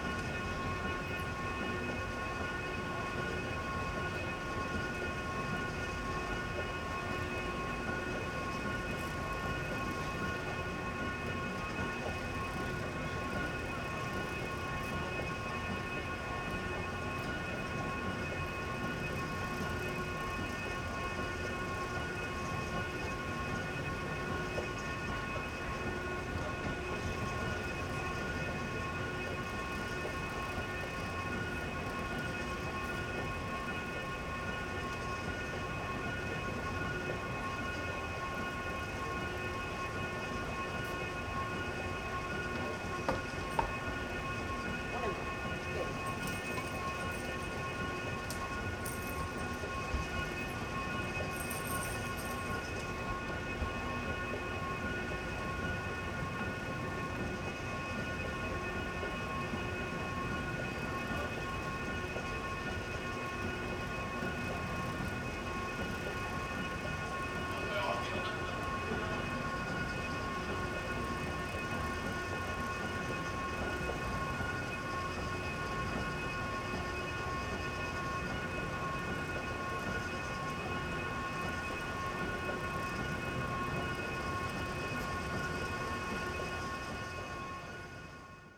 main station, Ústí nad Labem - station ambience, escalator
Ústí main station, ambience, sounds near escalator (Sony PCM D50, Primo EM172)